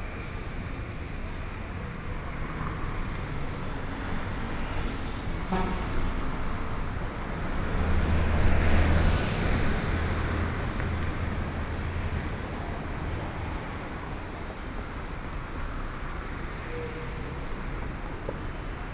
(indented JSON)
{
  "title": "中山區正義里, Taipei City - in the streets at night",
  "date": "2014-02-28 21:30:00",
  "description": "walking through in the Street, Through a variety of different shops\nPlease turn up the volume a little\nBinaural recordings, Sony PCM D100 + Soundman OKM II",
  "latitude": "25.05",
  "longitude": "121.53",
  "timezone": "Asia/Taipei"
}